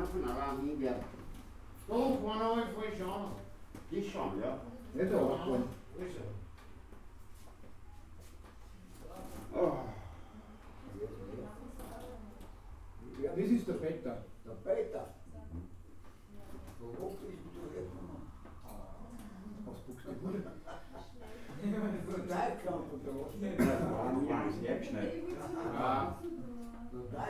Kleinmünchen, Linz, Österreich - dauphinehof
dauphinehof, dauphinestr. 40. 4030 linz
Linz, Austria, 16 January 2015